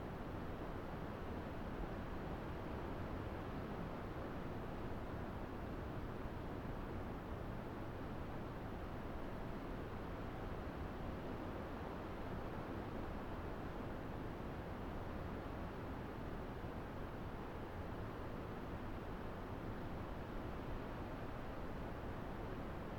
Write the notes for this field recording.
This is a recording in a forrest near to Loncoyén. I used Sennheiser MS microphones (MKH8050 MKH30) and a Sound Devices 633.